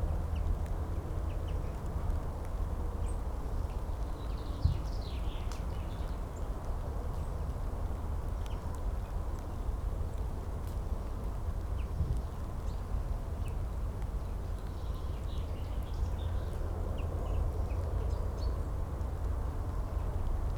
Rivis Square, Kirkbymoorside, York, UK - field boundary soundscape ... with added wood pigeon shoot ...
field boundary soundscape ... with added wood pigeon shoot ... lavaliers clipped to sandwich box ... started to record and then became aware of shooter in adjacent field ... bird calls ... song ... from ... wren ... blackbird ... crow ... great tit ... blue tit ... jackdaw ... brambling ... chaffinch ... skylark ... background noise ...